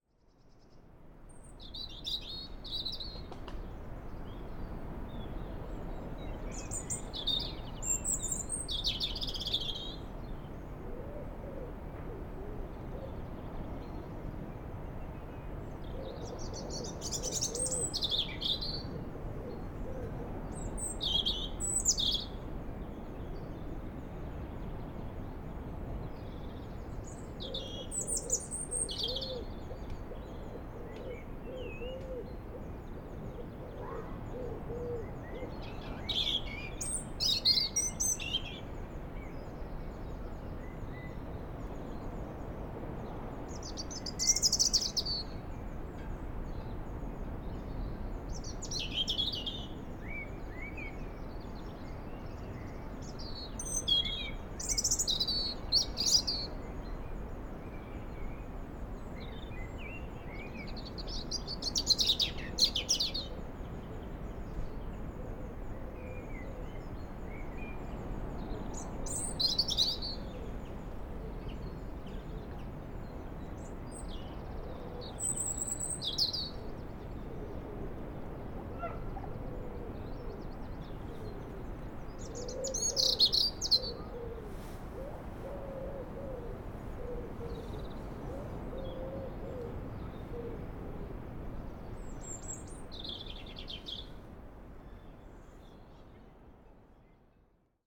Île Renote, Trégastel, France - Robin Redbreast and distant sea [Ile Renote ]
Un Rouge-gorge dans un arbre, la mer et du vent en arrière plan.
A Robin Redbreast close and the sea heard from the other side of the peninsula.
April 2019.